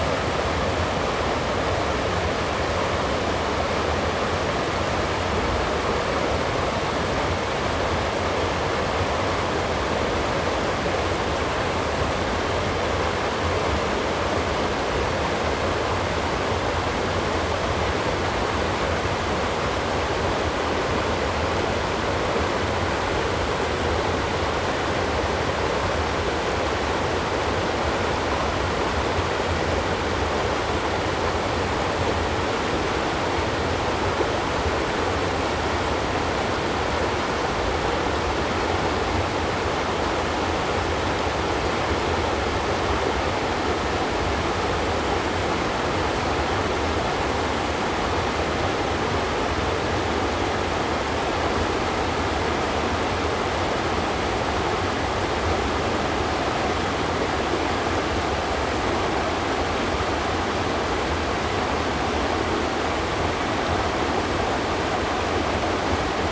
玉門關, 埔里鎮成功里 - small waterfall
The sound of the river, small waterfall
Zoom H2n MS+XY +Spatial audio
Nantou County, Taiwan